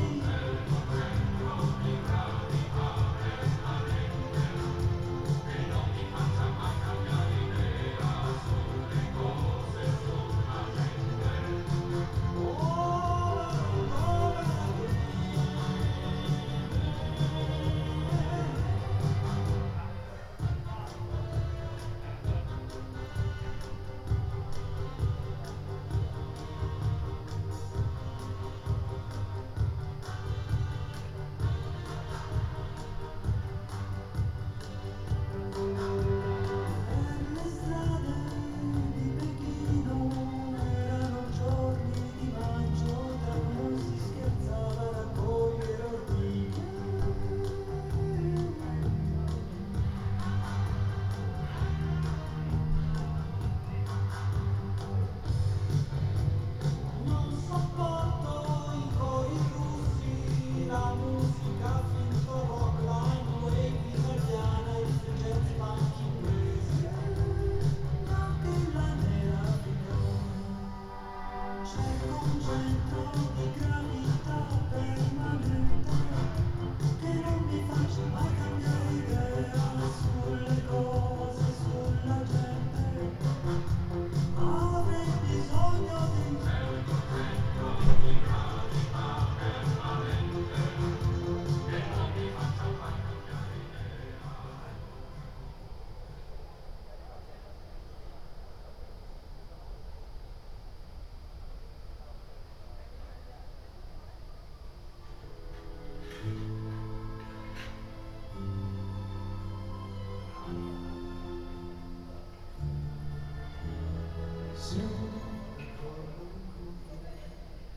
Ascolto il tuo cuore, città. I listen to your heart, city. Several chapters **SCROLL DOWN FOR ALL RECORDINGS** - Autumn Playlist on terrace in the time of COVID19: Soundscape
"Autumn Playlist on terrace in the time of COVID19": Soundscape
Chapter CXLII of Ascolto il tuo cuore, città. I listen to your heart, city
Saturday November 14th, 2020. Fixed position on an internal terrace at San Salvario district: from the building South, last floor, amplified music resonates at high volume. Turin, eight day of new restrictive disposition due to the epidemic of COVID19.
Start at 1:33 p.m. end at 2:18 p.m. duration of recording 45'03''